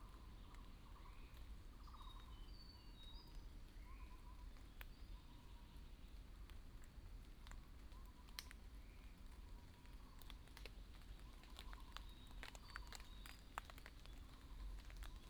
545台灣南投縣埔里鎮桃米里 - droplets and Bird sounds
In the woods, Evaporation of moisture droplets, Bird sounds